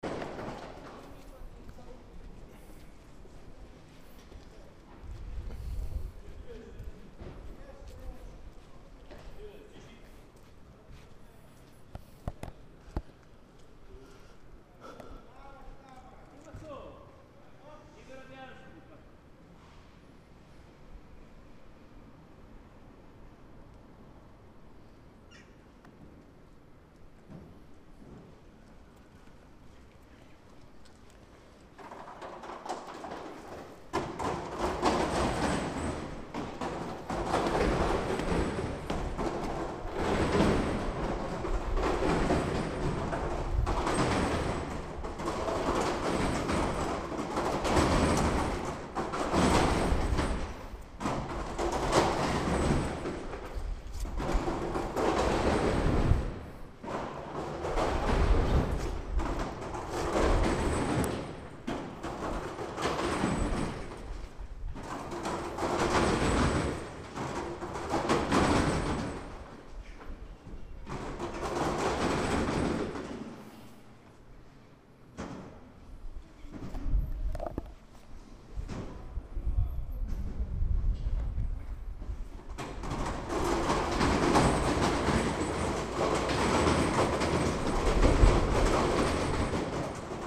Renovation work in Gdansk's old city centre: Sliding down rubble through a huge plastic tube from the 5th floor.
Gdańsk, Poland, 28 September